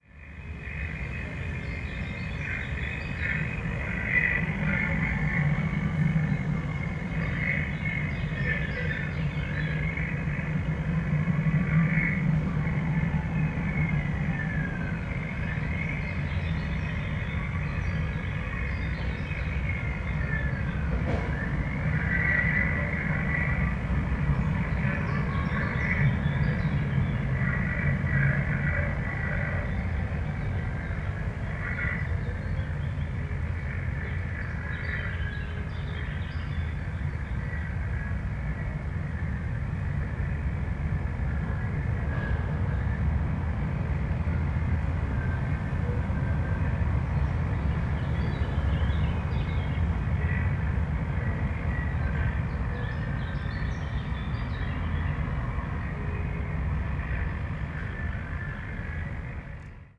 Praha, Lublaňská
broken intercom at house entry
22 June 2011